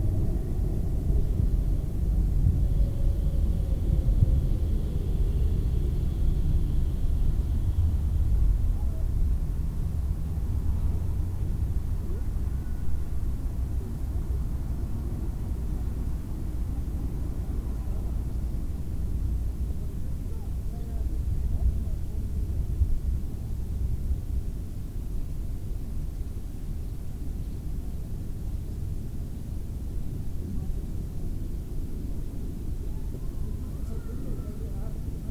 Hermann-Dorner-Allee, Berlin, Germany - New tram line at the Landschaftspark
Sitting in the meadow with microphone facing north-east with tram line on the right and park on the left.
Recorder: Tascam DR-05
28 November 2021, 14:09